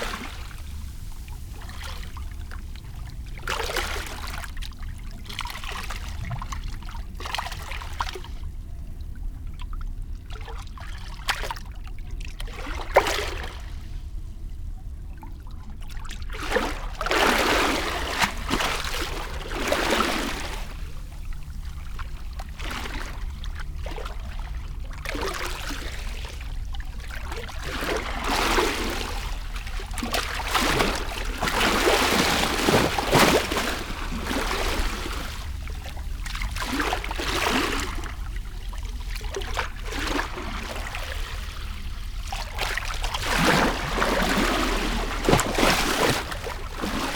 as water is almost everything we are, dynamics of solid and fluid is there somewhere in between all the time
Novigrad, Croatia, 18 July 2015